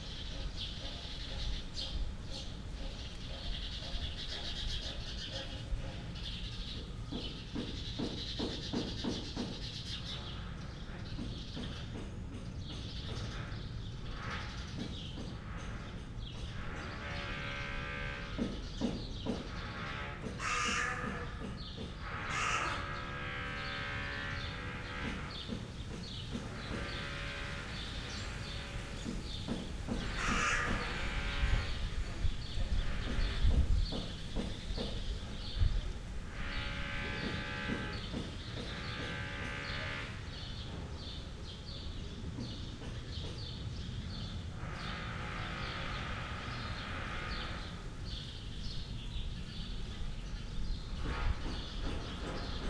Berlin Bürknerstr., backyard window - crow vs. drillhammer
got curious about an unusual sound of a dun crow, it was seemingly trying to imitate or answer to a drill hammer in the neighbourhood. fail in the end...
(Sony PCM D50, Primo EM172)